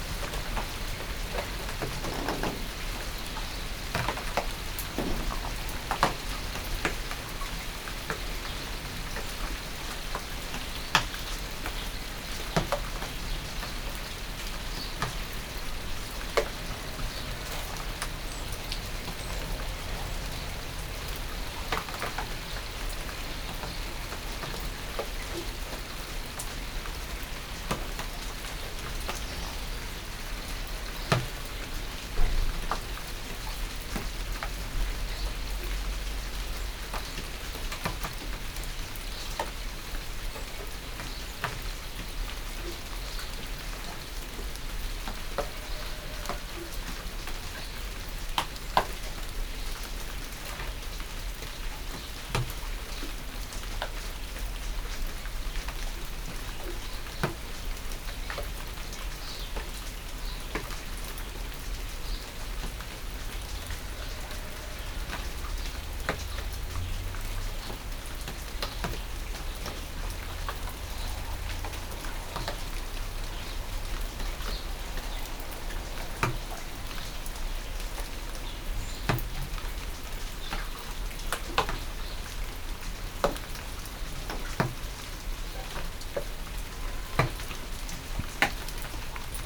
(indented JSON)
{
  "date": "2010-07-23 09:20:00",
  "description": "Bonaforth, leichter Sommerregen, unter dem Backhaus",
  "latitude": "51.40",
  "longitude": "9.63",
  "altitude": "134",
  "timezone": "Europe/Berlin"
}